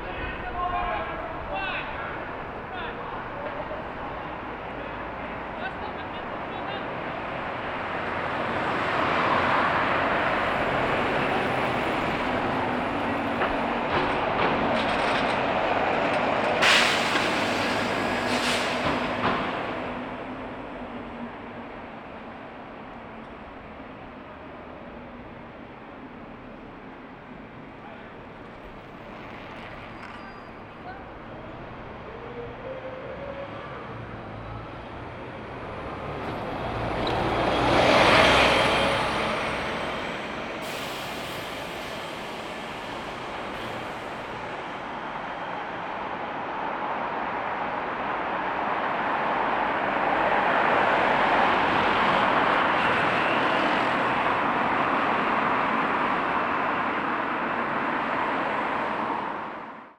Great Northern Mall, Belfast, UK - Great Victoria Street
One of the busier streets of Belfast, surrounded by the bus station, Europa Hotel, Opera theatre, and Crown Liquor Salon reflects how life just stopped, for everyone. However, while most things are bordered and shut down there is always a local squabble at the nearby Tesco Express.
County Antrim, Northern Ireland, United Kingdom, 27 March, 13:45